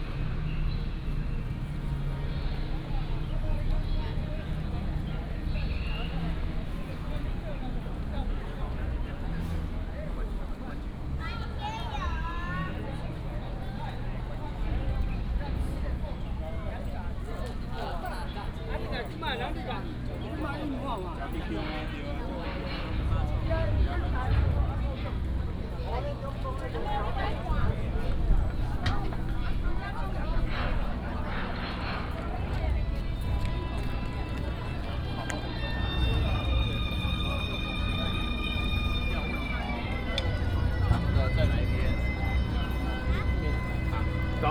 大龍峒保安宮, Taipei City - Walking in the temple
Walking in the temple, Traffic sound, sound of birds